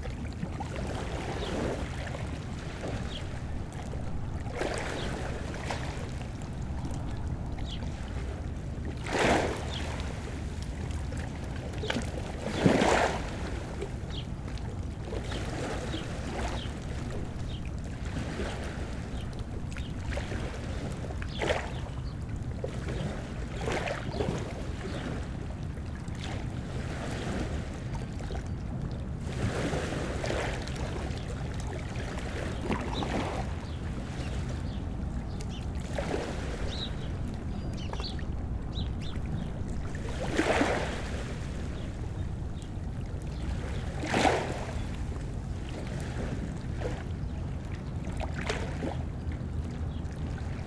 Washington St, Newport, RI, USA - Water and Birds, Newport
Sounds of water and birds in Newport, RI
Zoom h6